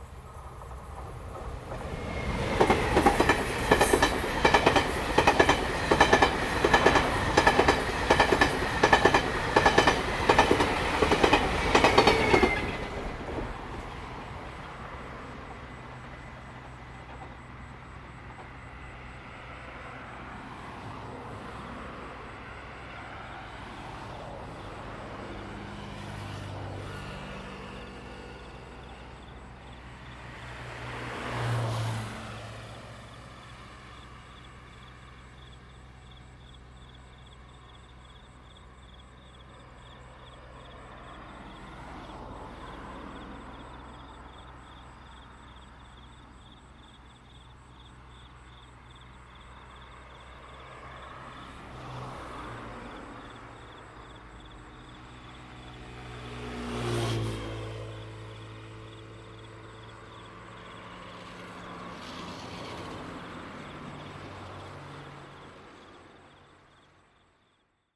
Next to the railroad tracks, Traffic sound, The train runs through
Zoom H2n MS+XY
11 August 2017, 19:17